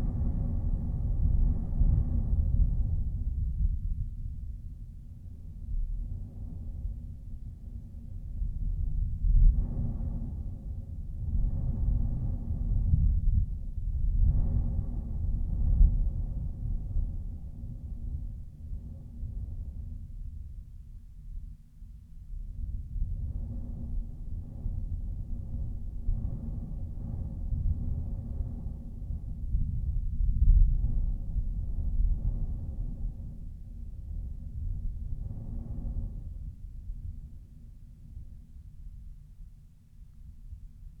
Luttons, UK - fires out ... mics in ...
fires out ... mics in ... lavalier mics in the stove and the sound of the draught up the chimney ...
12 March, 05:00